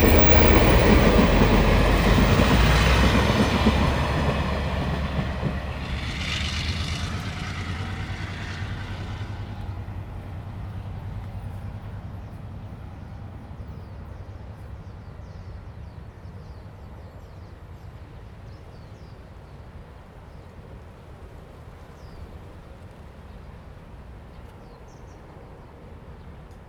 Haibin Rd., Tongxiao Township 苗栗縣 - Traffic sound
Traffic sound, The train runs through
Zoom H2n MS+XY +Spatial audio
24 March 2017, ~12:00